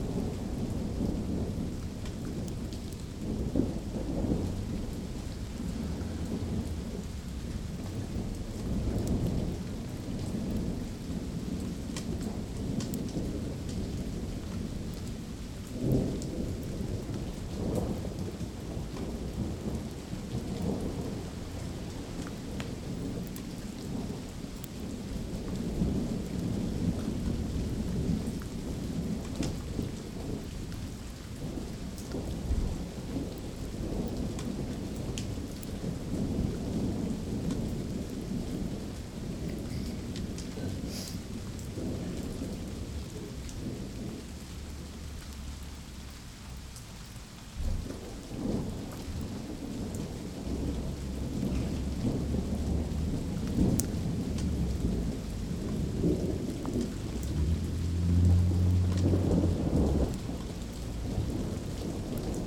Copernicuslaan, Den Haag, Nederland - Thunder and rain
Typical summer thunder and rain.
(recorded with internal mics of a Zoom H2)
Zuid-Holland, Nederland, 10 June 2019, ~15:00